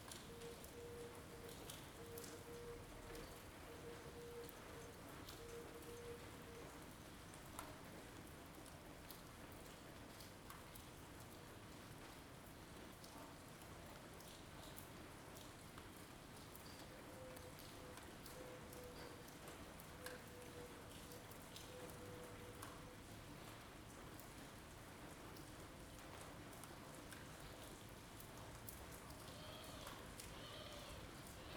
Carrer de Joan Blanques, Barcelona, España - Rain13042020BCNLockdown
Rain field recording made in the morning during the COVID-19 lockdown. Recorded using a Zoom H2. Raw field recording, no edition.